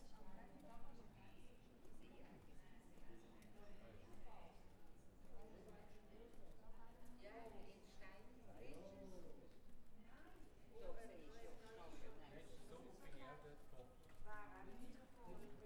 Altstadt Grossbasel, Basel, Schweiz - evening walk towards Kleinbasel
H4n Zoom, walk through old city over Wettsteinbrücke and then left